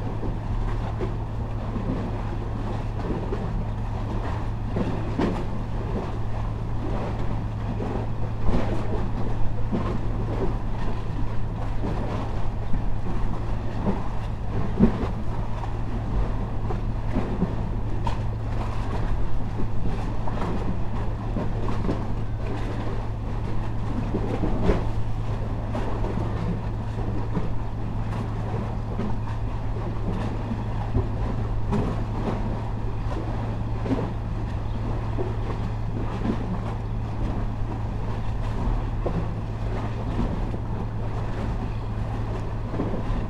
Kos, Greece, at sea level